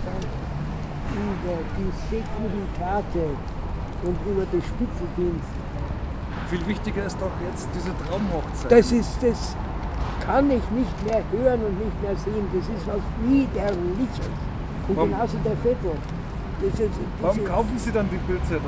munich - bookstreet
bookseller, street, munich, yellowpress, coins